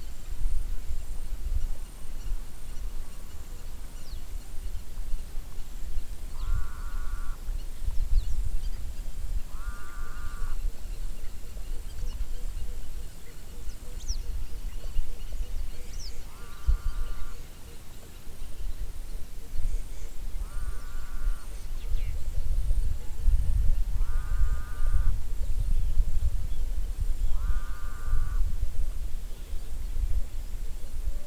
Wondo Genet, Sidama, Éthiopie - marécages écho
wetlands near Wondo Genet
9 December 2011, 08:56